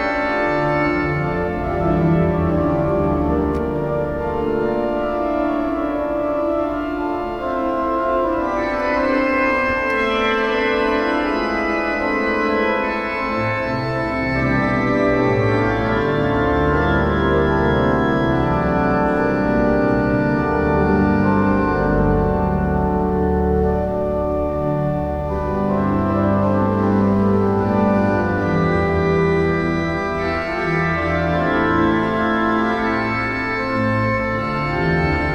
02 Dietrich Buxtehude_ BuxWV 180 — Christ, unser Herr, zum Jordan kam (D minor)
Berlin, Germany, 8 September